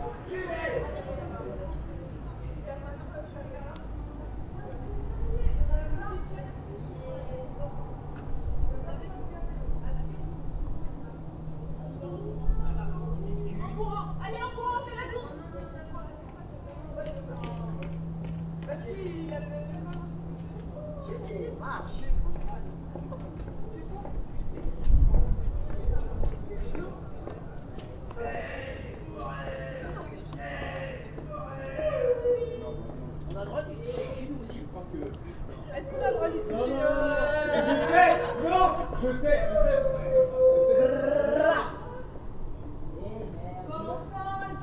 In the night. The sound of a group of young people strolling by singing and shouting enjoying themselves. A car passing by.
international city scapes - topographic field recordings and social ambiences